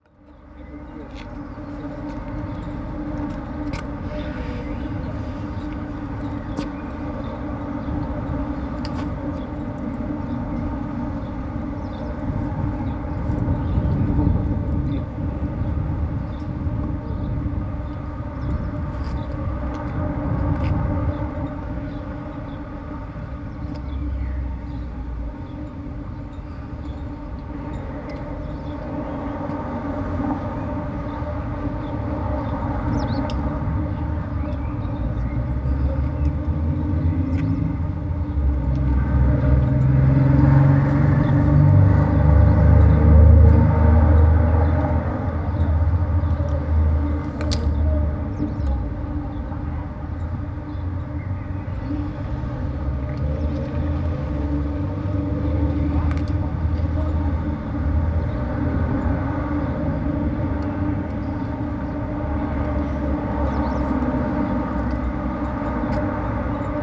Ljudski vrt Stadium, Mladinska ulica, Maribor, Slovenia - lighting pole pipe
recording inside a pipe on a service hatch cover ot the base of one of the stadium's giant lighting poles.